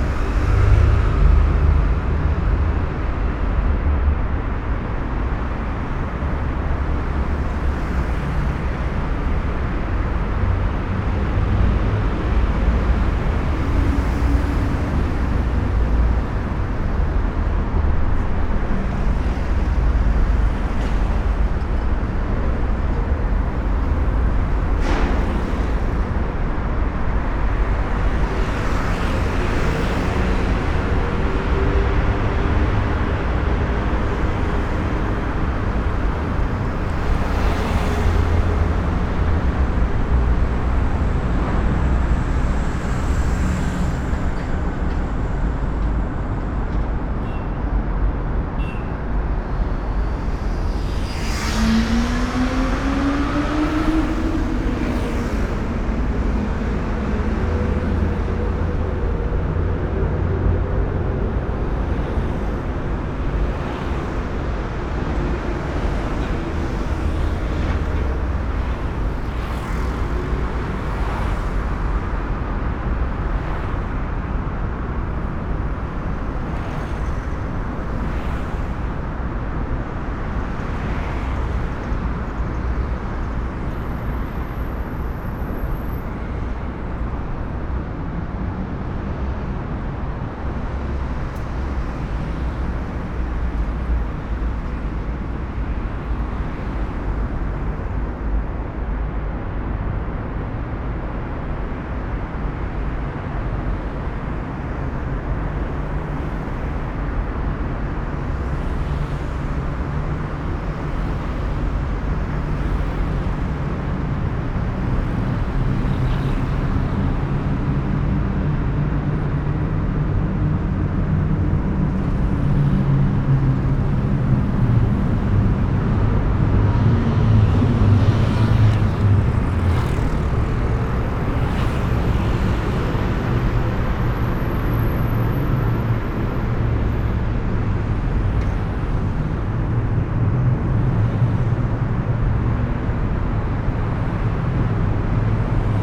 Triest, Via dell'Istria, Italy - tunnel roar
short walk into the tunnel
recorded binaural (as almost all my other recordings) with sony pcm-d50 and microphones, kindly given and made by Udo Noll